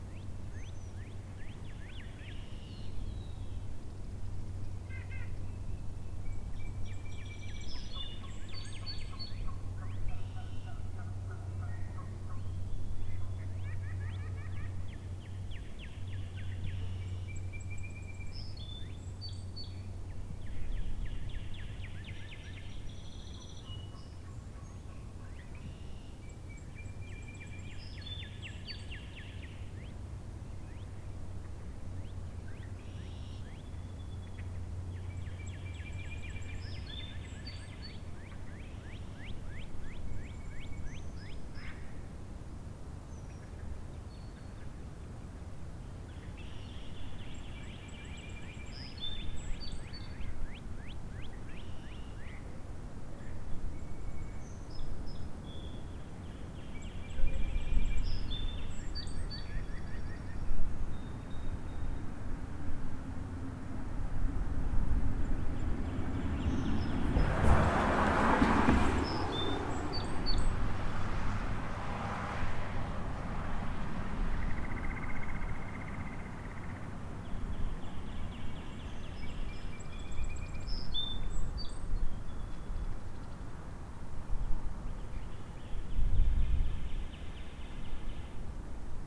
River Rd, Linn Grove, IN, USA - Wabash River sounds, River Road, near Linn Grove, IN
Wabash River sounds, River Road, Linn Grove, IN
Geneva, IN, USA, 13 April 2019